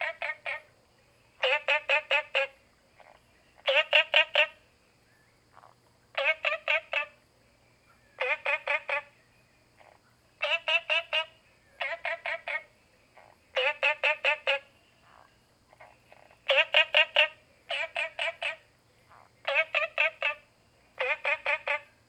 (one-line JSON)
{"title": "Green House Hostel, Puli Township - Frogs chirping", "date": "2015-09-16 19:11:00", "description": "Frogs chirping, at the Hostel\nZoom H2n MS+XY", "latitude": "23.94", "longitude": "120.92", "altitude": "495", "timezone": "Asia/Taipei"}